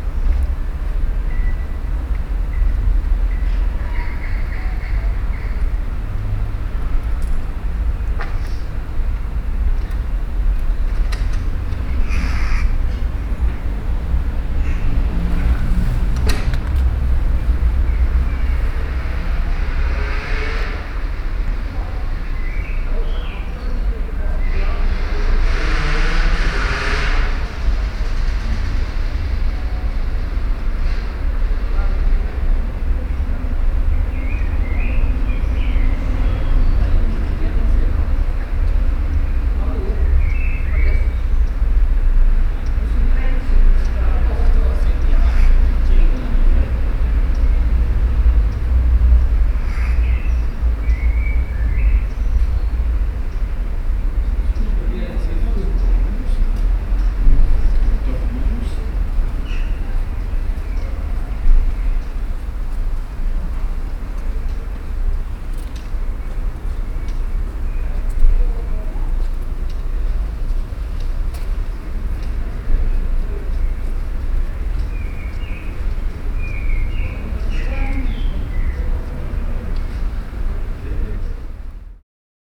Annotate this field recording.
Cahors, Eglise Saint-Barthélémy.